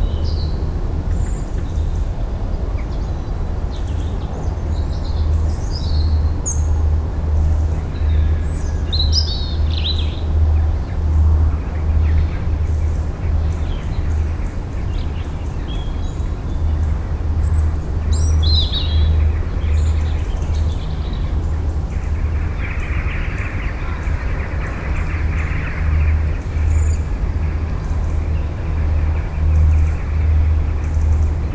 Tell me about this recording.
Early morning, few birds passing by.